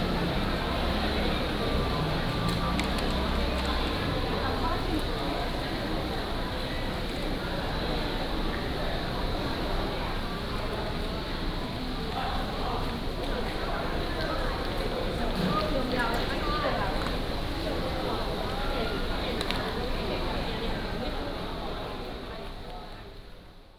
南寮漁港, Lüdao Township - In the harbor pier
In the harbor pier
Lyudao Township, 綠島南寮漁港, 31 October, 14:04